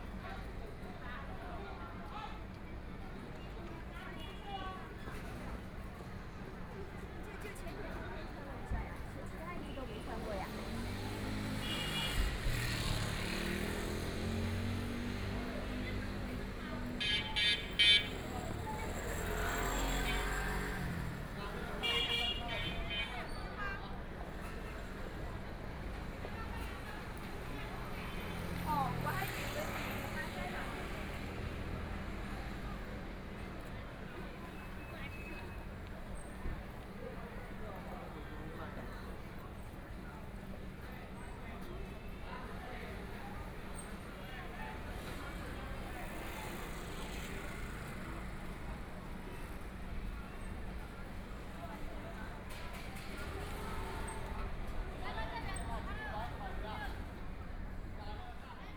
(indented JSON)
{
  "title": "Shanxi Road, Shanghai - in the corner",
  "date": "2013-11-25 17:20:00",
  "description": "Standing next to the restaurant, Shopping street sounds, The crowd, Trumpet, Brakes sound, Footsteps, Binaural recording, Zoom H6+ Soundman OKM II",
  "latitude": "31.24",
  "longitude": "121.48",
  "altitude": "8",
  "timezone": "Asia/Shanghai"
}